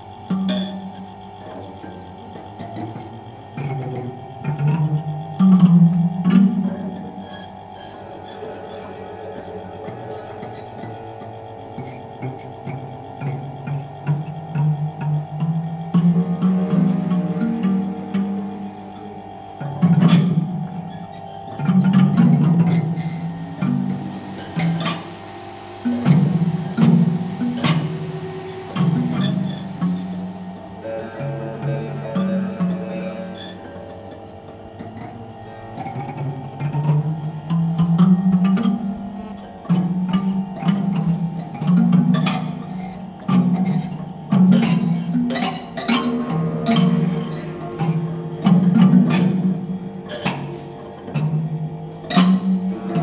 Two sound sculptures in Centre Georges Pompidou. Recording starts with Musicale (1977) bij Takis followed by Couleurs sonores no. 3 (1966) by Gregorgio Vardanega around 155.